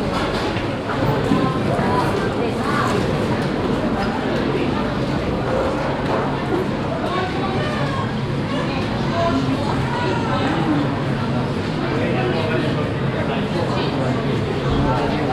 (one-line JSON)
{"title": "Neumarkt, Rumänien - Târgu Mureș, airport, check in hall", "date": "2012-11-18 19:30:00", "description": "Inside the small airport Târgu Mureș at the check in. The sounds of a crowded hall filled with people standing in a queue and waiting to check in their luggage. In the end an amplified anouncement.\nInternational city scapes - topographic field recordings and social ambiences", "latitude": "46.53", "longitude": "24.54", "altitude": "307", "timezone": "Europe/Bucharest"}